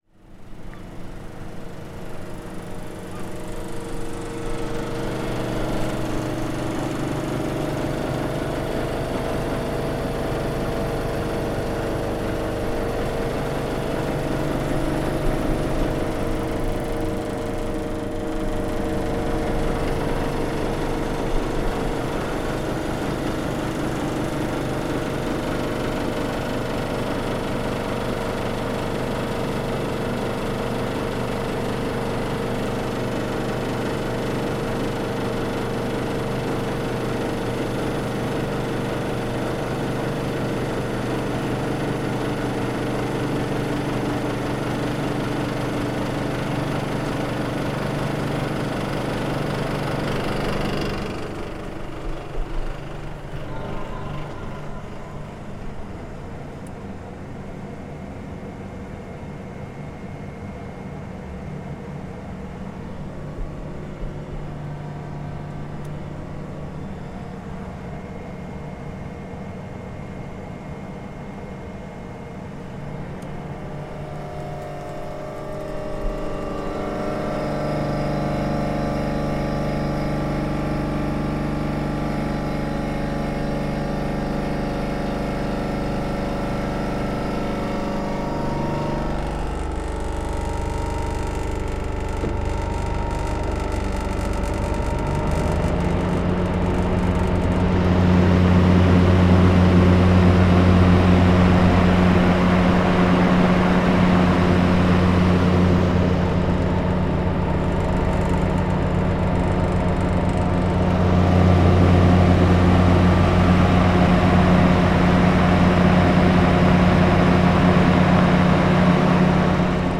Binckhorst, Laak, The Netherlands - airco fans
airco machines recorded with sennheiser me-66 and computer
13 March 2012, 17:30